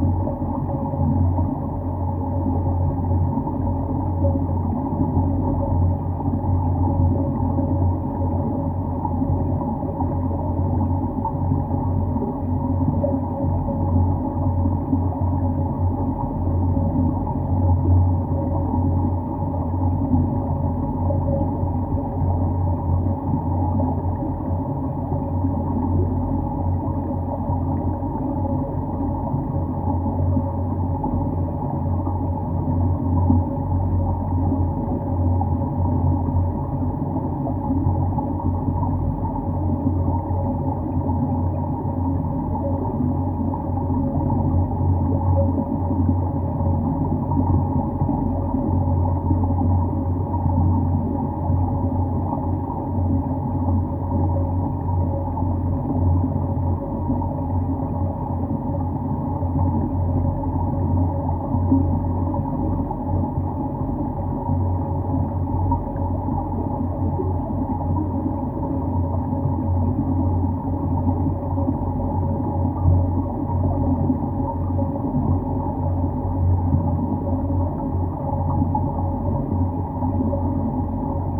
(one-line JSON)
{"title": "Panevėžys, Lithuania, the dam", "date": "2020-11-21 13:15:00", "description": "Listening the metalic construction of a small dam", "latitude": "55.73", "longitude": "24.38", "altitude": "49", "timezone": "Europe/Vilnius"}